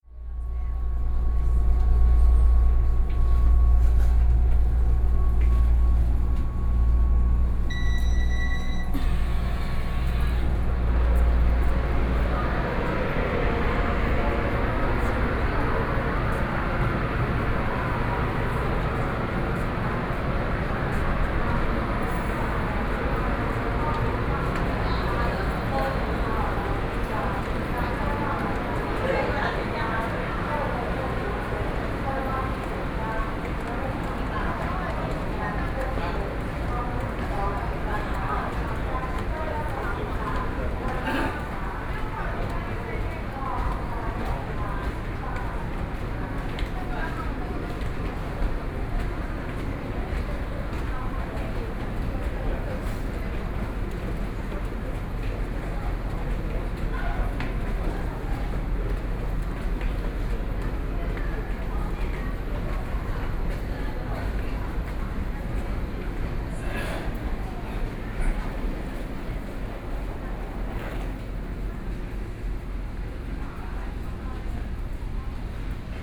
Kaohsiung Station, Taiwan - soundwalk
Toward the station hall, From the station platform